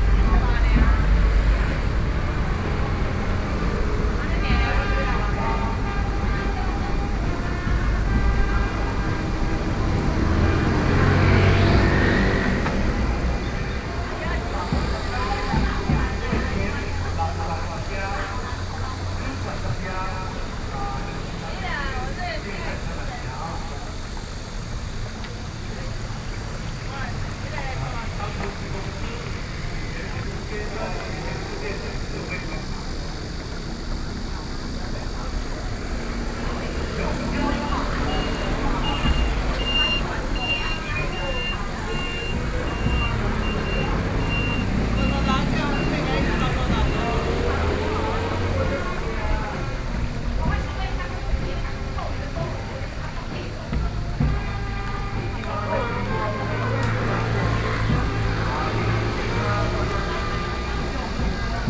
Ln., Sanshu Rd., Sanxia Dist., New Taipei City - the funeral
Next to the funeral is being held, Traffic Sound, Cicada sounds
Binaural recordings, Sony PCM D50+Soundman okm
New Taipei City, Taiwan, 8 July 2012